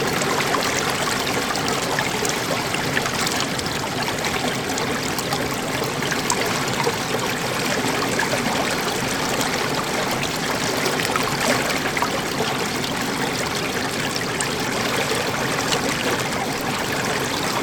We were traveling in the Altai mountains (Russia). The sounds of the local rivers is very great! Now there is something to remember )
Recored with a Zoom H2.
23 August 2014